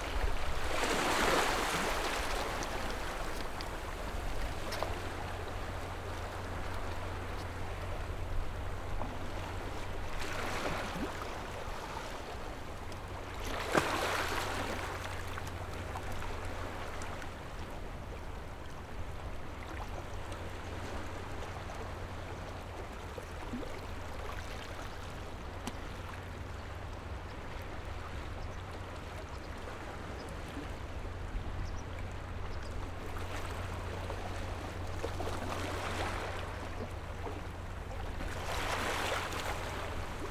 At the beach, Tide, Sound of the waves
Zoom H6 +RODE NT4
福建省, Mainland - Taiwan Border, October 2014